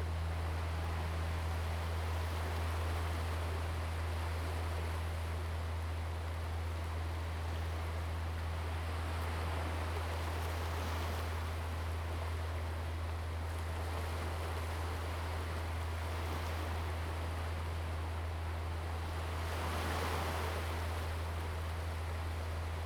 福德古井, Huxi Township - the Waves
At the beach, sound of the Waves, Distant fishing vessels
Zoom H2n MS+XY